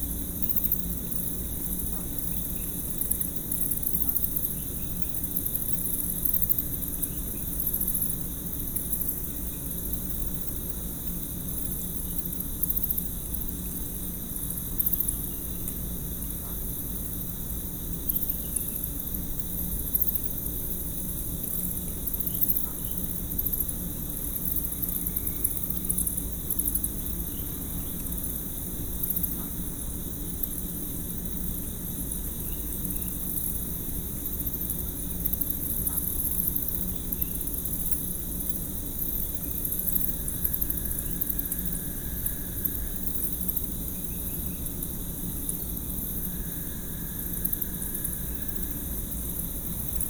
WLD 2012: Bat swarm under the Waugh bridge, Houston, Texas - WLD: 2012: Buffalo Bayou's Night Buddies
Midnight on the bayou after many consecutive days of rain, under the Waugh Dr. bridge, Houston, Texas. Mexican Freetail bats, roaches, insects, crickets, frogs, night herons, cars, traffic..
Church Audio CA-14 omnis + binaural headset > Tascam DR100 MK-2